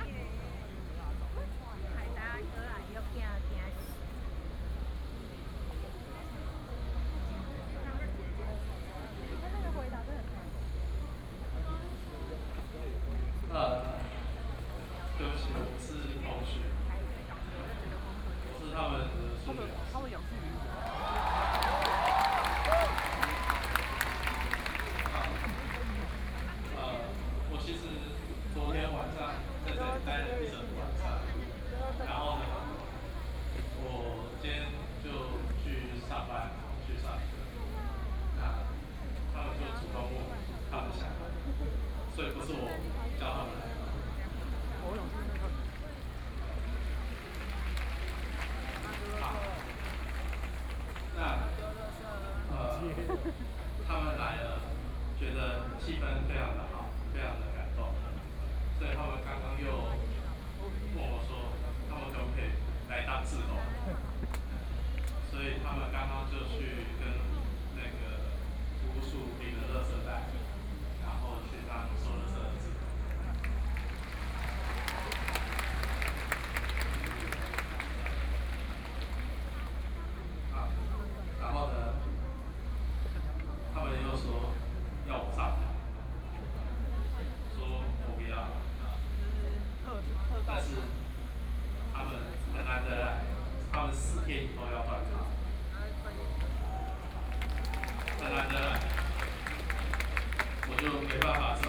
Jinan Rd., Taipei City - protest
Walking through the site in protest, People and students occupied the Legislative Yuan
Binaural recordings
Taipei City, Taiwan, 21 March 2014, ~20:00